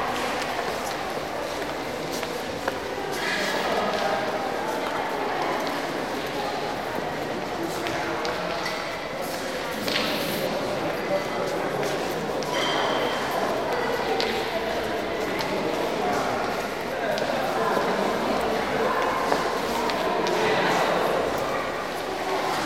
budapest, Nyugati pályaudvar, west station
station atmo with announcements and train noises
international city scapes and social ambiences